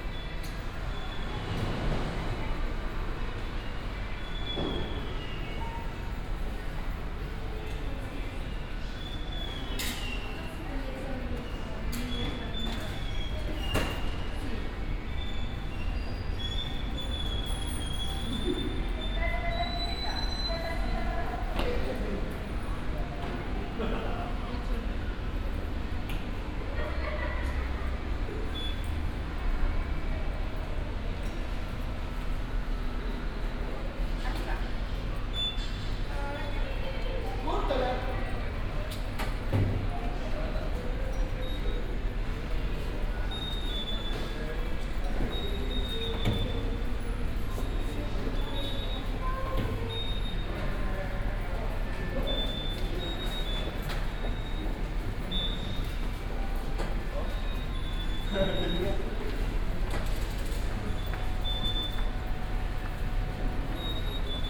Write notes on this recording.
Valparaiso, passage to the harbour and train station, ambience, short walk, (Sony PCM D50, OKM2)